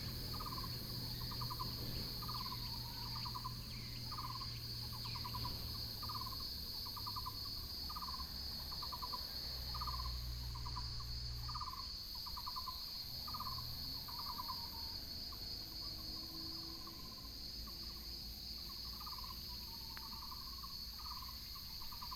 十六份產業道路, Hengshan Township - Morning in the mountains
birds sound, Morning in the mountains, Insects sound, Cicadas sound, Binaural recordings, Sony PCM D100+ Soundman OKM II
Hsinchu County, Taiwan, 12 September